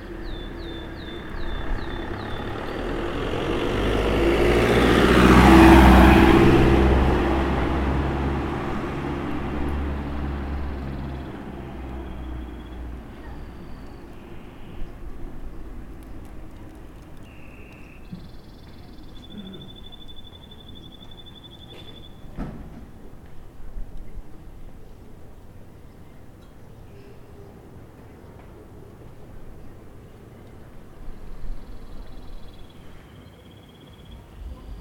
Les martinets sont partis, il reste quelques oiseaux domestiques, un canari en cage sur un balcon. J'ai connu ça dans mon enfance le chant du canari dans la cuisine stimulé par la cocotte minute Seb avec sa vapeur tournante. C'est une rue à sens unique .
30 July 2022, 10:20